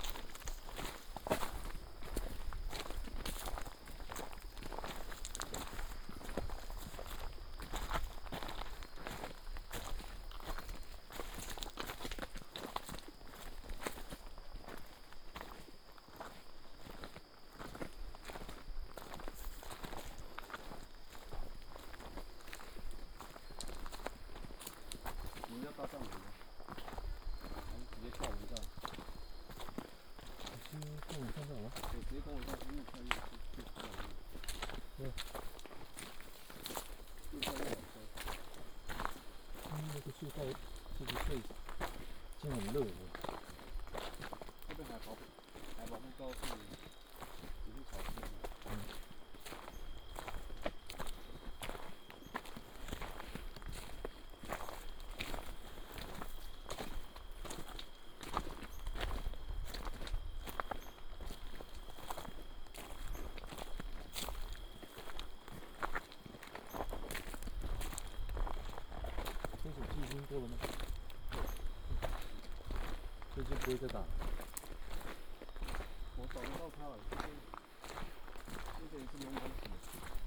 Follow tribal hunters walking on mountain trail, Ancient tribal mountain road, stream